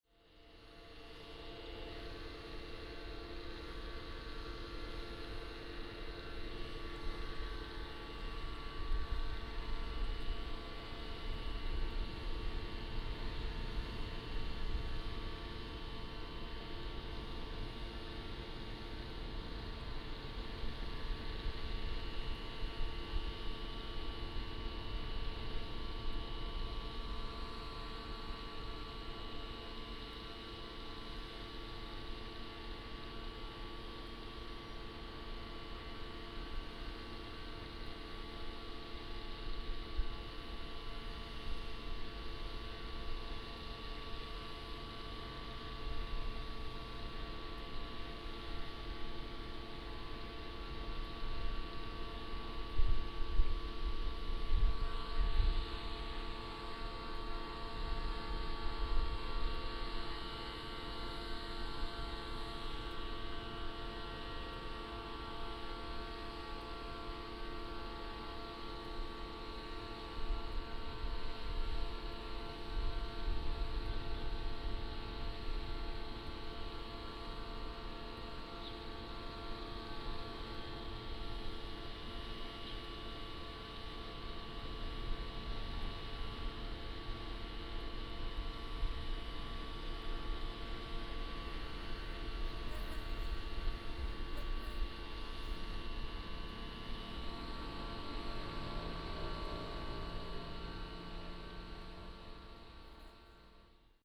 坂里水庫, Beigan Township - Next to the reservoir
In the mountains, Next to the reservoir, Noise from power plants